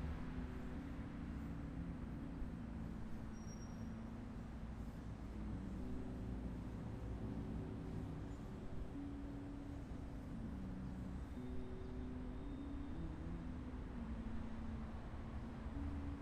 Bon-Secours, Marseille, France - ambiance terrain brûlé
camions pathak flûte
a-l.s, r.g, e.v roms